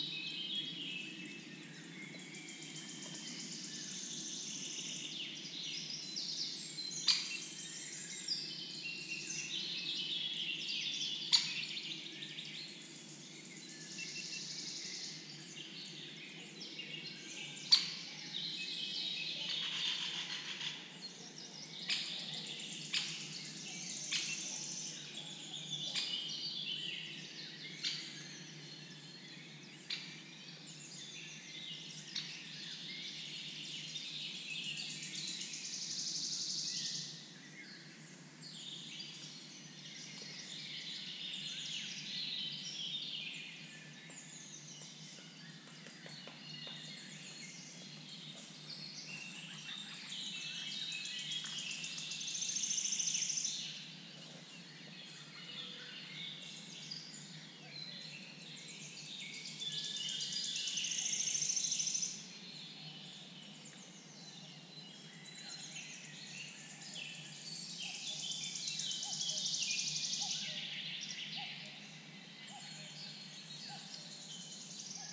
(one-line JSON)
{"title": "Zielonka Forest Landscape Park, Poland - Birds in the morning", "date": "2021-05-22 06:45:00", "description": "early morning trip to Zielonka Forest in Greater Poland Voivodship, Poland; these days finding a place unpolluted with man-made sounds becomes a real challenge so the only suitable time of the day is dawn; Birds seem to like it as well ;)\nRecorded with PCM-D100 and Clippy EM270 Stereo Microphones", "latitude": "52.53", "longitude": "17.11", "altitude": "114", "timezone": "Europe/Warsaw"}